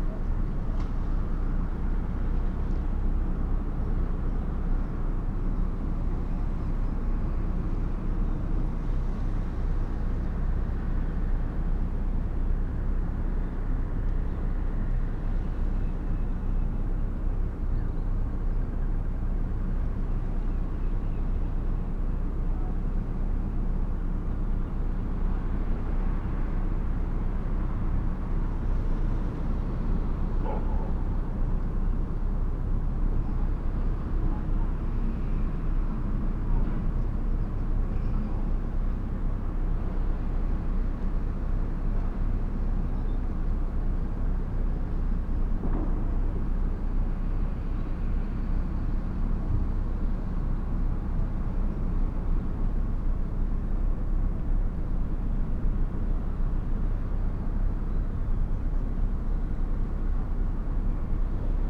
starlings on the harbour light ... xlr sass to zoom h5 ... bird calls from ... lesser black-backed gull ... herring gull ... grey heron ... wren ... ostercatcher ... harbour noises and a conversation about a walrus ...